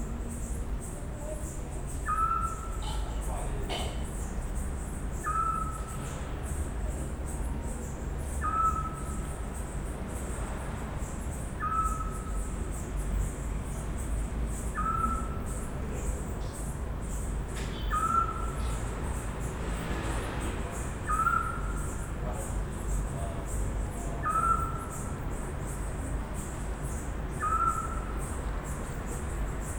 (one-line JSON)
{"title": "Via De Fin, Trieste, Italy - night ambience, owl", "date": "2013-09-07 00:30:00", "description": "night ambience\n(SD702, AT BP4025)", "latitude": "45.64", "longitude": "13.77", "altitude": "58", "timezone": "Europe/Rome"}